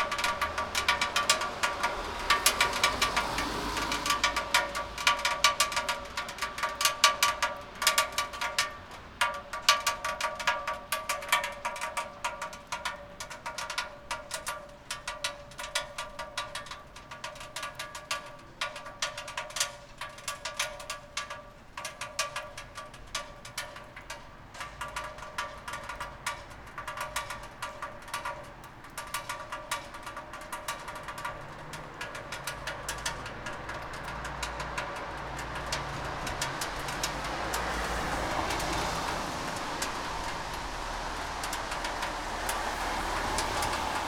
rain gutter, tyrševa - rain drop, one after another, quickly